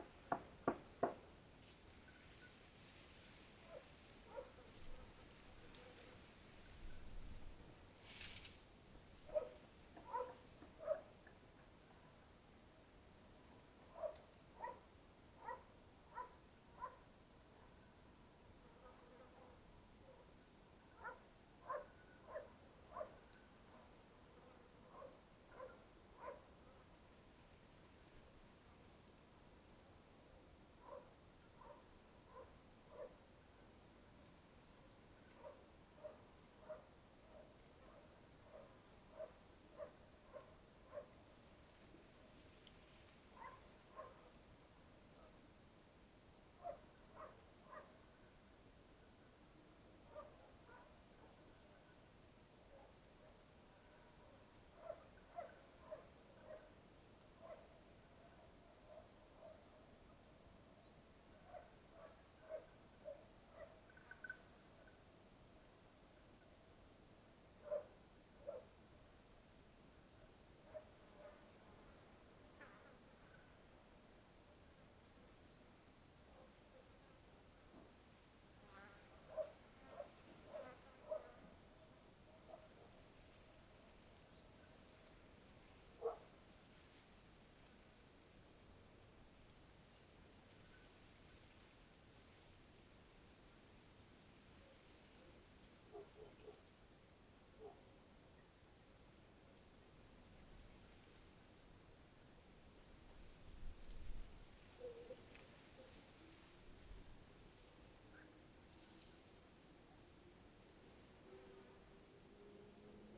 Frontera, Santa Cruz de Tenerife, España - Entre el cielo y la tierra
El Sitio is a rural and friendly accomodation with different small houses in a mountainside where I stay for few days in my fist visit to El Hierro. There i have a great time, a great view of El Golfo and a great sounscape that makes me feel like in sky… Birds, dogs barks, distant motorcycles, flys, dry leaves dragin along the ground… and the bells from a near church... Total relax.